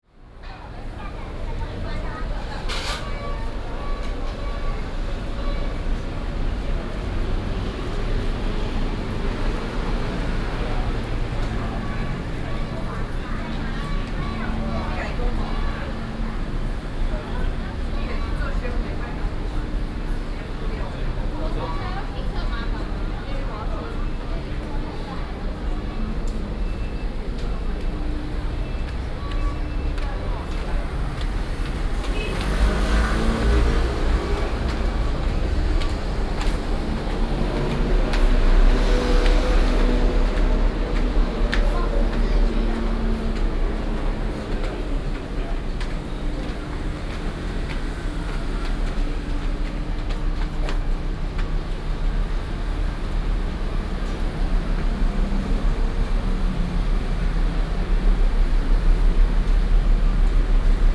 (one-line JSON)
{"title": "仁愛區, Keelung City - Walking on the road", "date": "2016-07-16 18:16:00", "description": "from the station, Walking on the road, Traffic Sound, Footsteps", "latitude": "25.13", "longitude": "121.74", "altitude": "10", "timezone": "Asia/Taipei"}